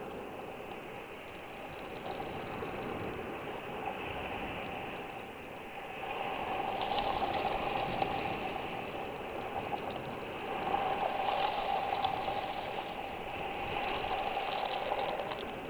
Waves and small pebbles washing along a tree trunk half in the sea recorded with a contact mic. It is the same recording as in the mix above but heard on its own.